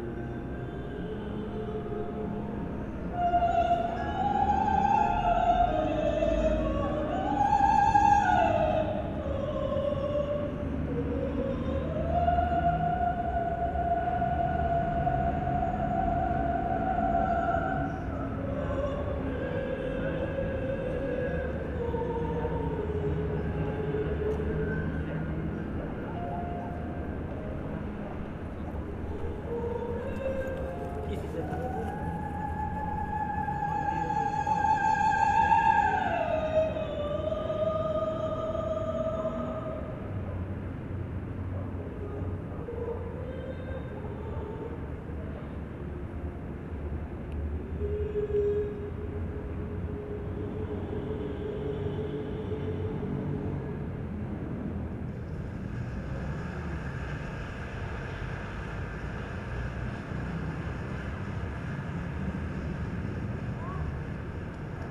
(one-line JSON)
{"title": "Santa Fe, Bogotá, Colombia - Proyeccion de un concierto a fuera de una sala de conciertos.", "date": "2013-05-23 10:10:00", "description": "grancion de una parte de las piezas.", "latitude": "4.61", "longitude": "-74.07", "altitude": "2617", "timezone": "America/Bogota"}